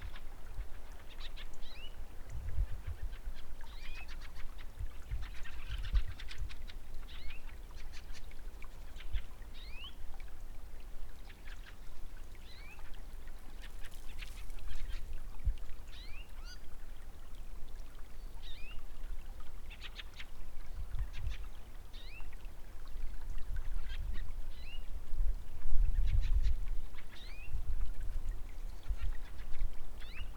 Wladyslawowo, Chlapowski alley, birds
birds chirping in a Chalpowski alley nature reserve
January 2012